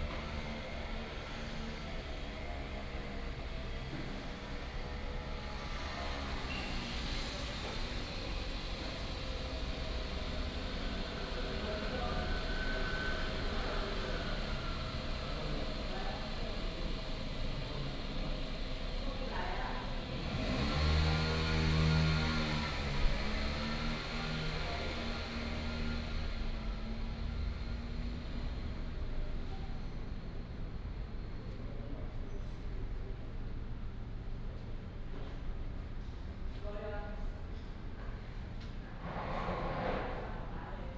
龍潭南天宮, Longtan Dist. - In the temple
In the temple, Traffic sound
Taoyuan City, Longtan District, July 2017